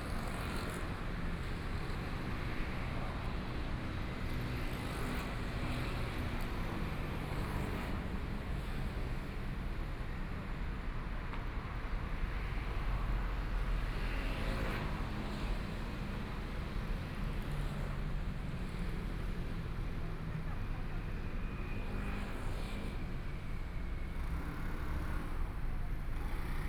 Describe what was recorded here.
Walking on the street, Traffic Sound, Through different shops and homes, Walking in the direction of the East, Please turn up the volume, Binaural recordings, Zoom H4n+ Soundman OKM II